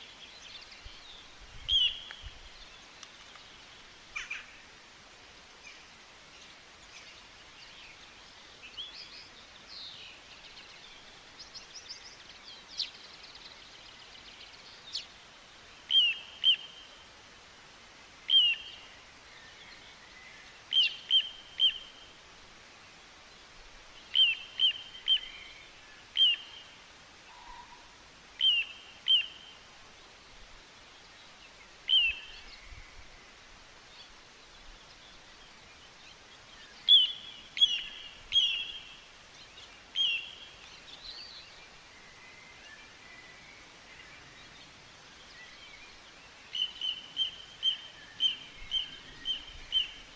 ruthenstrom, drochtersen, birdcalls - vogelstimmen am aussendeich, ruthenstrom drochtersen, mai 2007
Drochtersen, Germany, 28 May